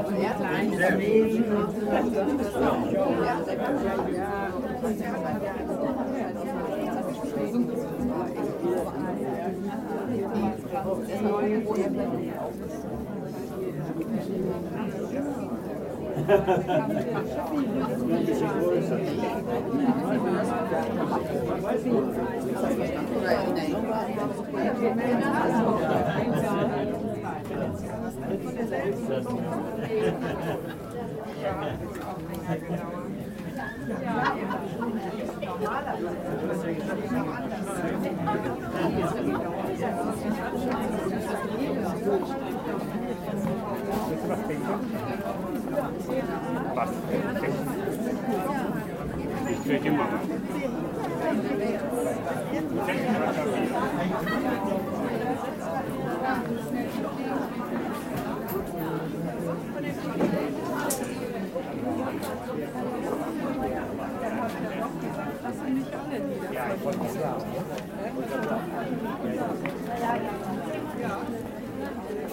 schauspielhaus bochum, kammerspiele, audience
audience waiting for the drop curtain to be drawn, musicians doing their warming up. "kammerspiele" (i. e. the intimate theatre of the bochum schauspielhaus).
recorded june 23rd, 2008 before the evening show.
project: "hasenbrot - a private sound diary"
Bochum, Germany